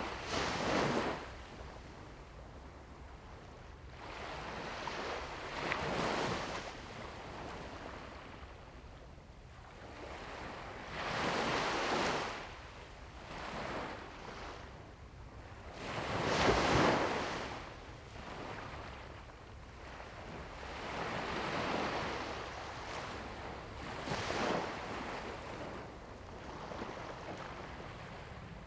下埔下, Jinning Township - Sound of the waves
Sound of the waves
Zoom H2n MS+XY